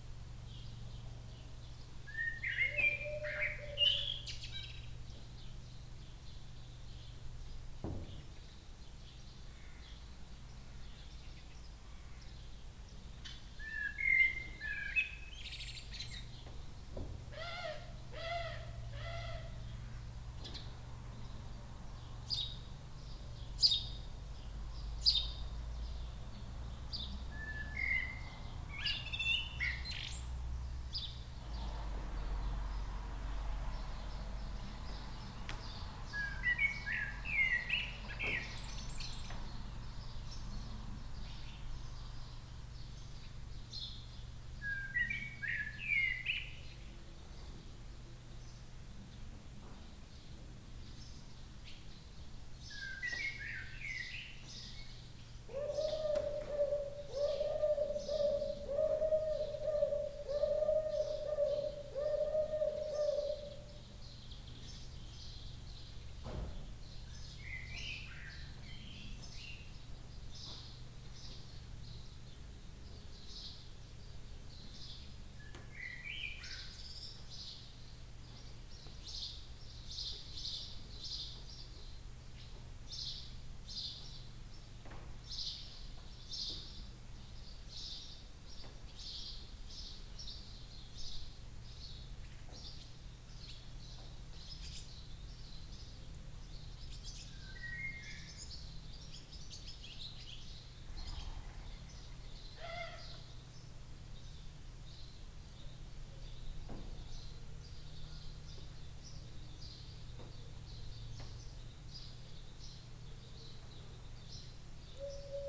Scottish Borders, UK - Birds singing in the barn
There was a huge barn on the campsite where we were staying, and it was full of birds including swallows and swifts and blackbirds and wood pigeons (I think). The big resonant barn amplified their songs in such a lovely way that I wanted to document it. EDIROL R-09 left on top of an old boiler for 35 minutes - this is an excerpt of a much longer recording.